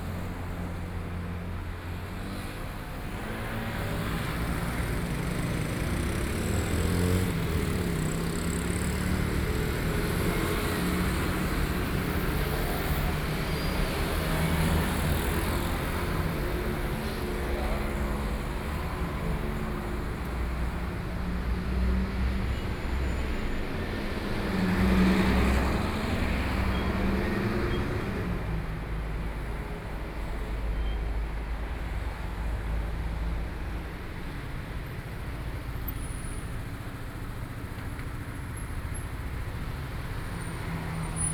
Beitou - Street corner
Street corner, Sony PCM D50 + Soundman OKM II